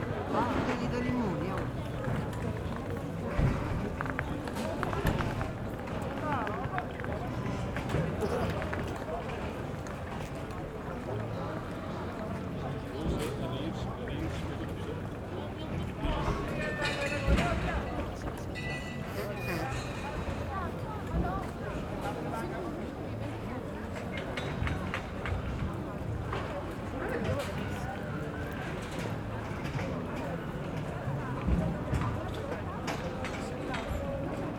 Piazza Campo de Fiori, Roma RM, Italy - Closing market at Campo deFiori
Market closing, pedestrians
Fin de marché, passants
16 February 2018